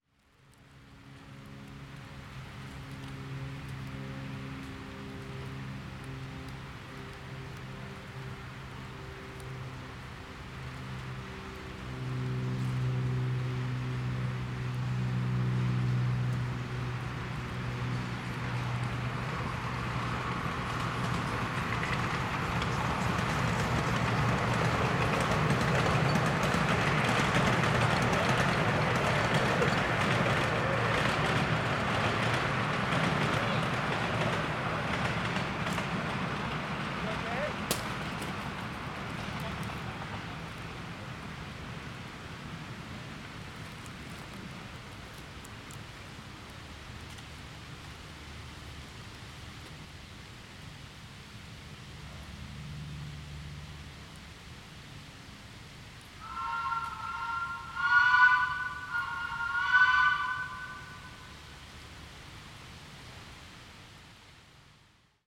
Wabash, Frisco and Pacific Railroad, Glencoe, Missouri, USA - Wabash, Frisco and Pacific Railroad
Recording of wind blowing through the trees and an airplane overhead followed by the mighty Wabash, Frisco and Pacific steam train. The Wabash, Frisco and Pacific Railroad operates steam locomotives on about a mile of 12 gauge track in the woods next to the Meramec River. It gives rides to small children and their parents who sit on the top of miniature train cars Sunday afternoons May to October (weather permitting). The railroad is closed for this season but this day the train was apparently taking out a group of teenage boys to help on track maintenance. They sat huddled on top of the last car. As they passed and just before disappearing back into the woods someone is heard asking, “You ok?” Immediately after this a projectile is detected striking the ground (0:37). Train whistle sounds at 0:57.
Missouri, United States of America, 31 October 2020, 15:05